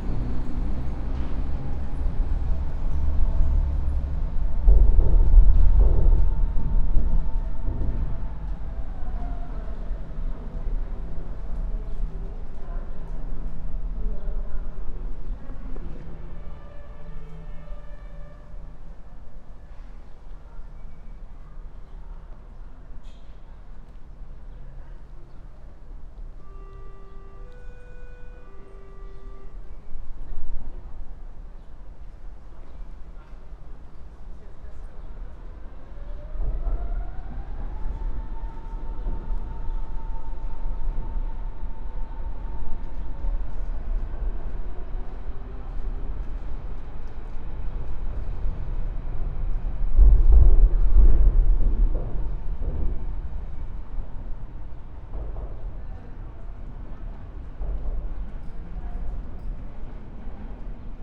Bösebrücke, Bornholmer Str., Berlin, Deutschland - Bösebrücke Under
A sunny November afternoon.
The large space under the bridge swings in deep blows from the traffic above.
People, dogs, and the sounds of Bornholmerstrasse station fill the place.
If you know the place, you can feel the current lockdown in the way humans use it.
12 November 2020